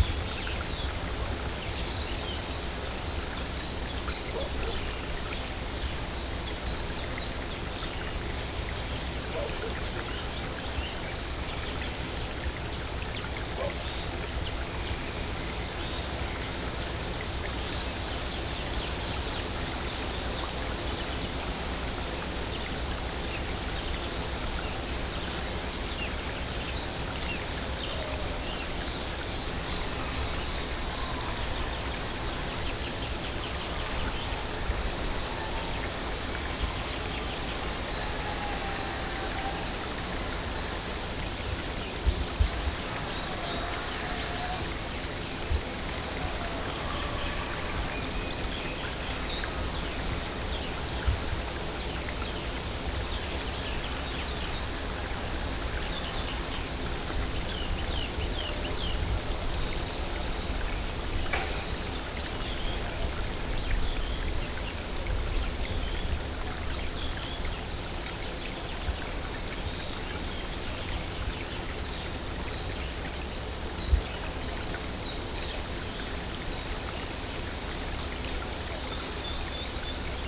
thin brook seeping among the trees. (roland r-07)